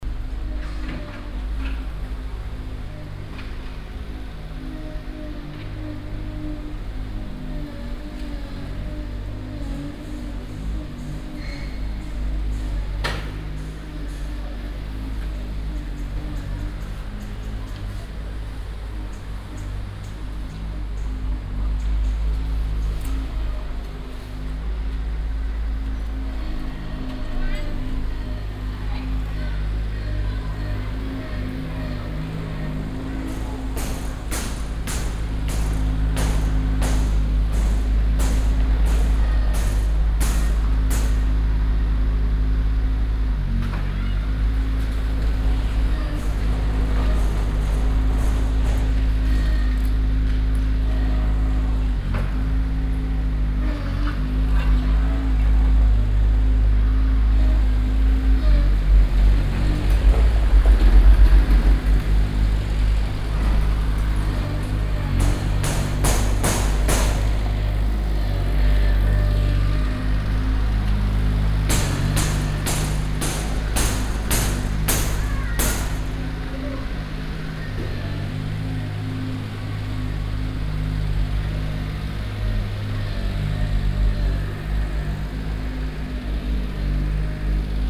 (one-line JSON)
{"title": "cologne, antwerpenerstr, ferngest.gabelstabler", "date": "2008-06-26 17:51:00", "description": "ferngesteuerter gabelstabler auf strasse abladend, mittags\nsoundmap nrw - social ambiences - sound in public spaces - in & outdoor nearfield recordings", "latitude": "50.94", "longitude": "6.94", "altitude": "58", "timezone": "Europe/Berlin"}